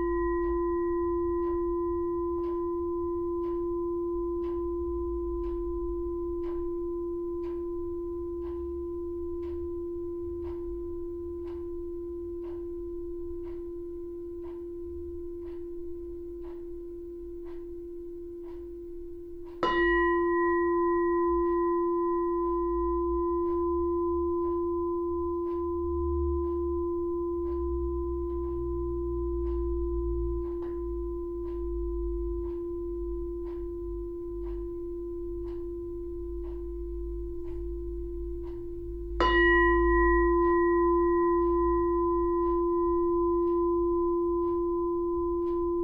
A short 10 minute meditation in the basement studio of Berkshire Pilates. The fading sound of the meditation bell reveals traffic, notably the low rumble of engines and boom of car stereos. The electric heater buzzes and clicking as it warms-up and together with the clock adds a sense of constancy to the sound of the space. (Spaced pair of MKH 8020s + SD MixPre6)